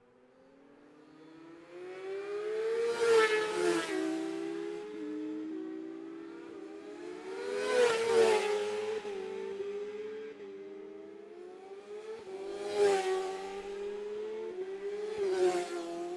Jacksons Ln, Scarborough, UK - Gold Cup 2020 ...
Gold Cup 2020 ... 600 evens practice ... dpa bag MixPre3 ...